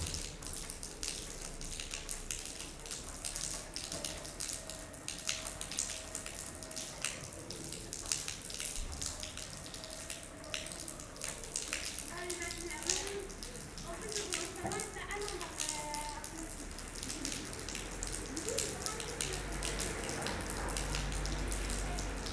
A constant rain is falling on La Rochelle this morning. Water is falling from gutters.
La Rochelle, France, May 2018